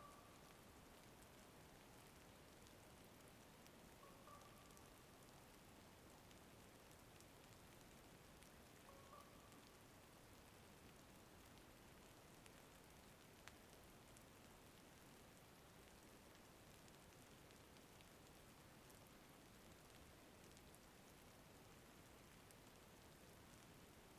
Near Puumala, Finland - Rain and Ravens
Winter time on the shore of lake Saimaa. Thawing ice combined with slushy rain caught by branches of overarching trees. Several calls of ravens and other unidentified birds (send me an email of you recognise it).
M/S recording (Schoeps rig). Post: Excerpting, EQing, slight Multiband Expansion. No overlay, no cut.